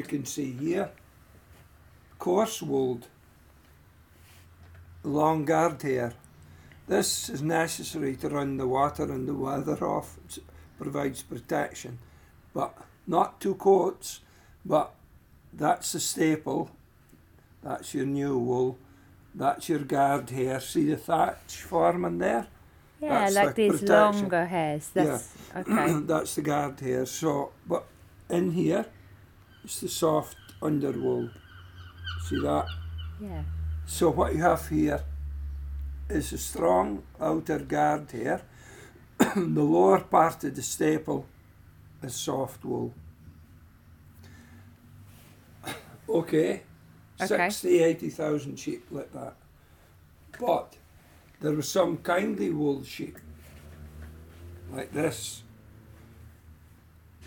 Jamieson & Smith, Shetland Islands, UK - Oliver Henry talking about the history of Shetland Wool
This is Oliver Henry telling me about the history of Shetland wool and talking me through two different types of fleece that have historically been found in the Isles. We were talking in a room towards the back of Jamieson & Smith, with a door open to the docks, so you can hear the gulls outside. He talks about a rougher Shetland wool sheep with "no home" i.e. no real use anywhere in anything. He also talks about "kindly wool" and the importance of soft wool in the economy of crofting. He mentions many of the sorts of garments traditionally made by women in Shetland, and emphasises the importance of the softer wool for their construction. He also describes how the lack of fences in Shetland meant that the two distinct fleeces previously discussed got genetically more and more merged as the sheep ran together and interbred, and then he talks about how the resultant fleeces are mixed, and filled with different finenesses of wool.
6 August 2013